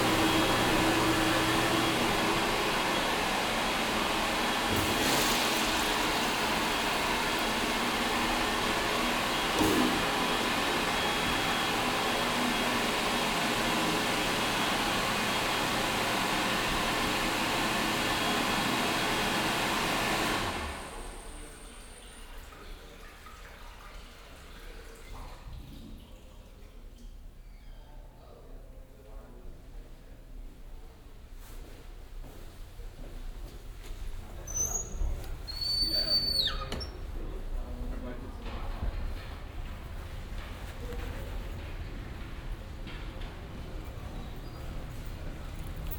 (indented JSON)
{
  "title": "vancouver, davie street, roundhouse community centre",
  "description": "watersounds in the restroom, footsteps and door, in the hallway of the building\nsoundmap international\nsocial ambiences/ listen to the people - in & outdoor nearfield recordings",
  "latitude": "49.27",
  "longitude": "-123.12",
  "altitude": "2",
  "timezone": "GMT+1"
}